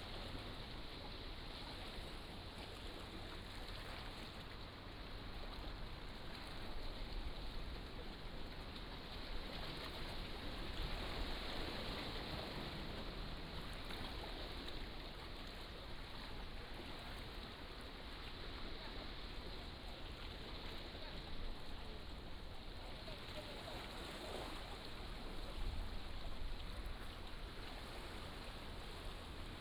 Water Acoustic, Consumers slope block
福建省 (Fujian), Mainland - Taiwan Border